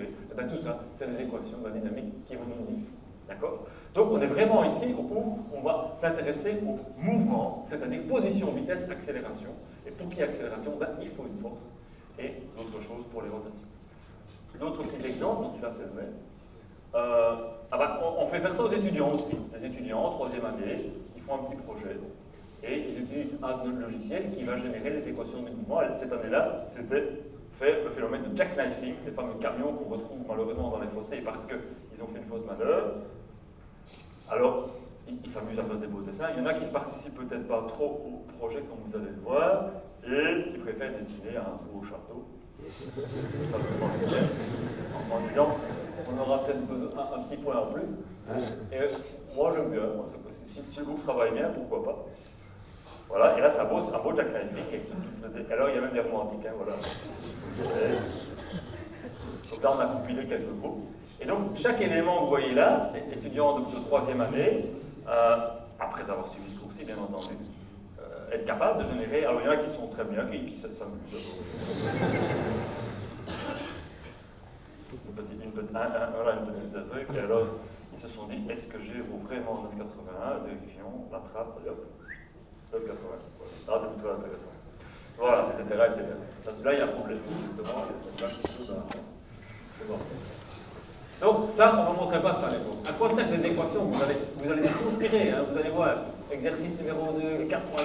Quartier du Biéreau, Ottignies-Louvain-la-Neuve, Belgique - A course of mechanic
A course of mechanic, in the huge auditoire called Croix du Sud.